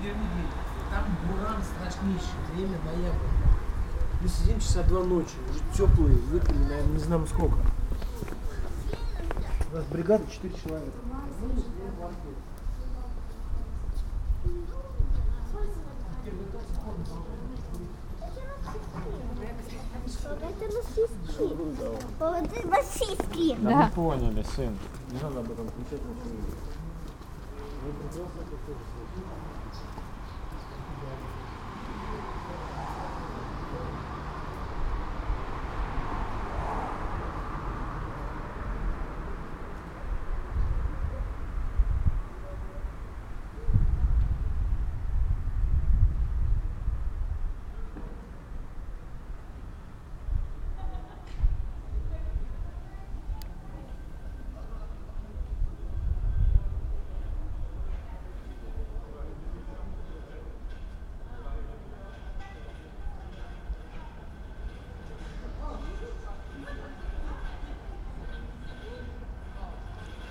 Vidovdanska cesta, Ljubljana, Slovenia - paved street
walk, people passing by, bikes, winds in tree crown, people talking, car traffic ...
Osrednjeslovenska, Zahodna Slovenija, Slovenija